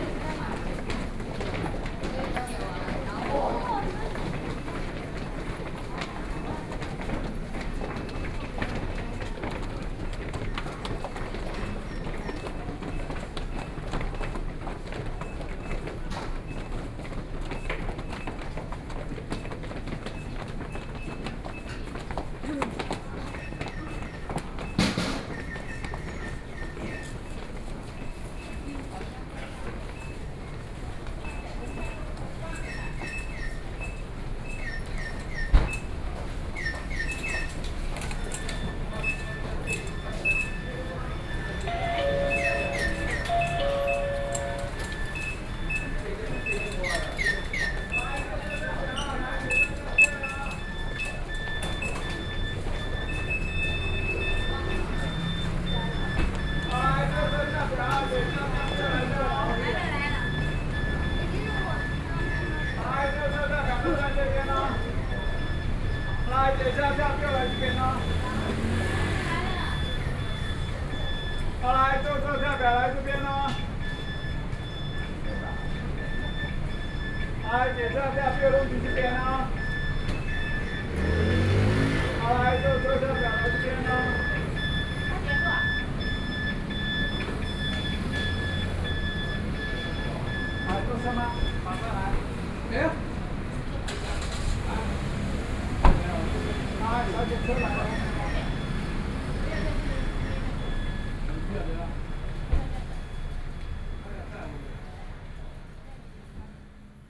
After getting off from the platform go through the underpass railway station, Sony PCM D50 + Soundman OKM II